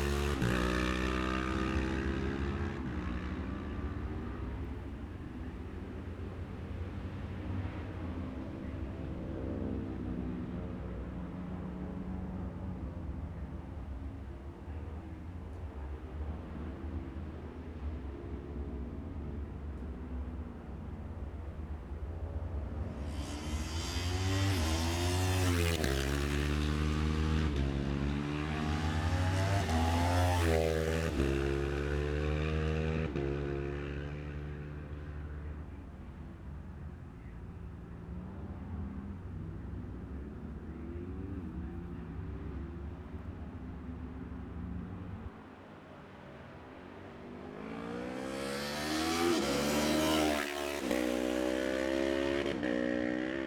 {"title": "Jacksons Ln, Scarborough, UK - Gold Cup 2020 ...", "date": "2020-09-11 11:22:00", "description": "Gold Cup 2020 ... Twins practice ... dpas bag MixPre3 ...", "latitude": "54.27", "longitude": "-0.41", "altitude": "144", "timezone": "Europe/London"}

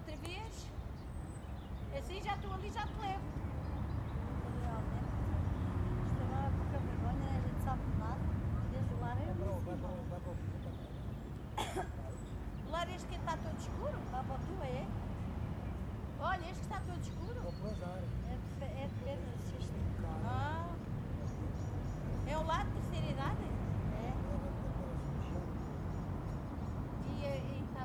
{"title": "Largo da Estacao, Pinhão, Portugal - Estação, Pinhão, Portugal", "date": "2014-02-12", "description": "Estação, Pinhão, Portugal Mapa Sonoro do Rio Douro Railway Station, Pinhao, Portugal", "latitude": "41.19", "longitude": "-7.55", "altitude": "87", "timezone": "Europe/Lisbon"}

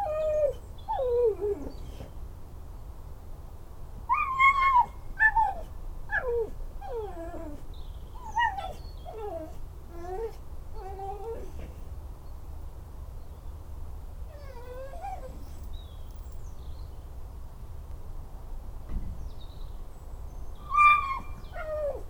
{"title": "Court-St.-Étienne, Belgique - Bingo", "date": "2016-02-24 19:10:00", "description": "This is her dog, Bingo. It's an old american staff dog. If you think it's a chihuahua or a small poodle, this could be normal !\nBingo is alone in his kennel. The beginning is quite soundless.\n0:13 mn - If you think this is a frog, you're wrong. Bingo was thinking to be alone and it's simply belching !!\n1:55 - All this is too long, and Bingo begins to cry and to bark all this infinite sadness.\nBingo in definitive is a quite strange dog ;-)", "latitude": "50.62", "longitude": "4.53", "altitude": "128", "timezone": "Europe/Brussels"}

